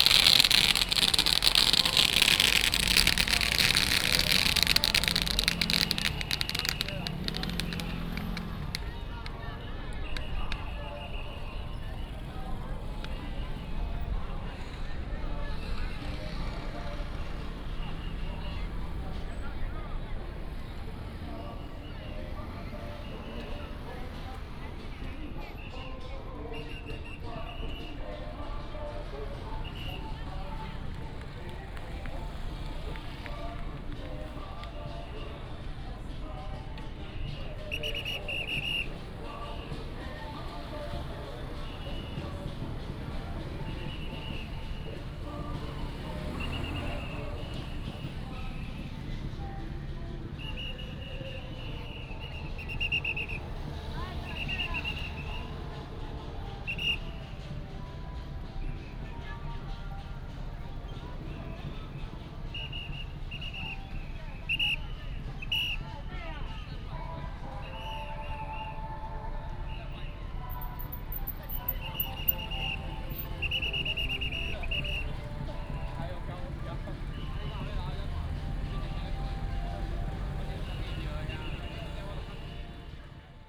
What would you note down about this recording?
Firecrackers and fireworks sound, whistle, Matsu Pilgrimage Procession